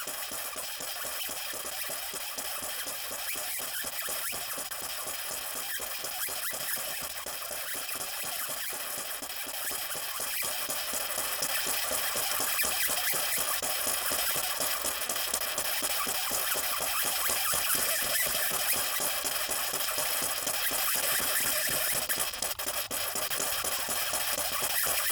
hhkeupat sorti du tuyau - Cornimont, France
October 2012